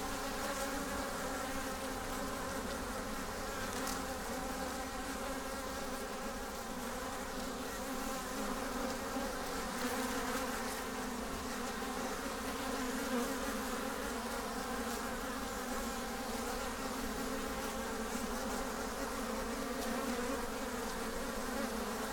Whitestone, Exeter - Merrymeet Bees
My mum (103 yrs on May 19th) has always loved bees. Dad, when he was alive used to have 3 or 4 hives. Just recently a friend has introduced a hive in Mum's front garden, close to an apple tree and virginia creeper. She loves it. The honey is gorgeous. Recorded using a home made SASS rig based on 2 Primo EM 172 capsules to Olympus LS14 placed about 10 cms away, off set to rhs at the level of the landing stage . An overcast but mild day with a light breeze. What a frenetic and wonderful sound.